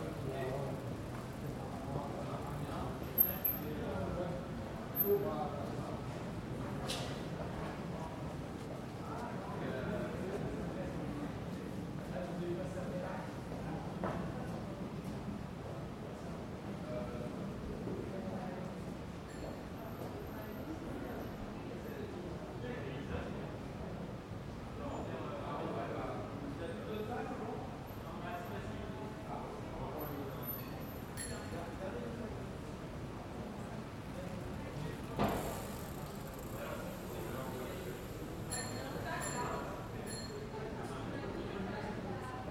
{"title": "Rue de Béarn, Paris, France - AMB PARIS EVENING RUE DE BÉARN MS SCHOEPS MATRICED", "date": "2022-02-22 19:12:00", "description": "This is a recording of the Rue de Béarn during evening near the famous 'Place des Vosges' located in the 3th district in Paris. I used Schoeps MS microphones (CMC5 - MK4 - MK8) and a Sound Devices Mixpre6.", "latitude": "48.86", "longitude": "2.37", "altitude": "45", "timezone": "Europe/Paris"}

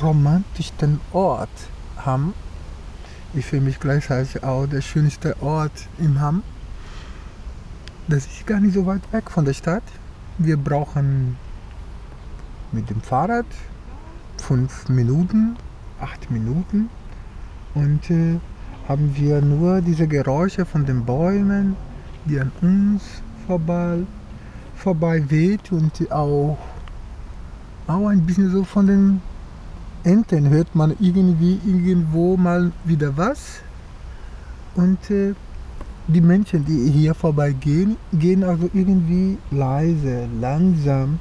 {"title": "Kurpark Bad Hamm, Hamm, Germany - A romantic place...", "date": "2014-08-09 15:59:00", "description": "Marcos takes us to his favourite place in Hamm… we are in the “Kurpark” of the spa “Bad Hamm”, sitting at a bench at the end of the pond, listening to the sounds around us… the people here, says Marcos are walking quietly and more slowly than elsewhere as if they were at a sacred place… and he adds a story, that once he has listened here at this place to familiar sounds from home…\nMarcos führt uns an seinen liebsten Ort in der Stadt… im Kurpark von “Bad Hamm”, am Ende des Teiches… und er erzählt uns, dass er einmal hier ungewöhlichen und bekannten Klängen zugehört hat….", "latitude": "51.69", "longitude": "7.85", "altitude": "65", "timezone": "Europe/Berlin"}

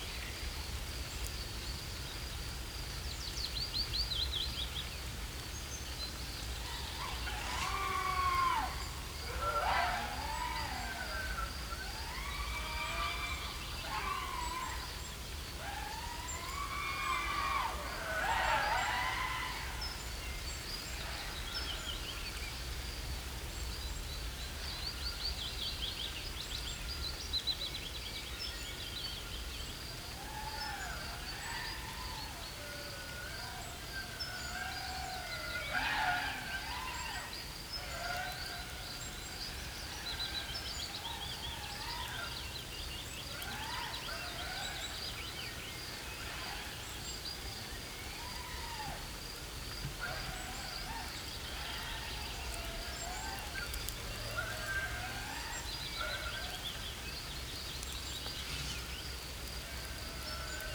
{"title": "Vogelsang, Zehdenick, Germany - Drones zing and a Black Woodpecker hammers at the top of a dead tree", "date": "2020-05-29 16:31:00", "description": "Visiting the abandoned exSoviet base of Vogelsang in 2020. My first trip outside Berlin during the Covid-19 pandemic. The train journey was better than expected. It was not so crowded and everyone wore a mask. Otherwise as normal. Sadly returning traffic in the city has brought back the pollution, so it was good to be in the forest and breath clean air again. Good weather too, pleasantly warm and a fresh breeze that constantly fluttered the leaves. Others were here too, flying drones that sound like overgrown mosquitos or just wandering.\nThere seemed to be a greater variety of wildlife than usual. Maybe they hav been less disturbed during the corona lockdown. For the first time I saw wild boar, a large tusked male with a much smaller female. These are big animals, but they moved away quickly after seeing us. A black woodpecker - the largest of the family - was another first. It's drumming on a dead tree was the loudest sound in the forest.", "latitude": "53.06", "longitude": "13.37", "altitude": "53", "timezone": "Europe/Berlin"}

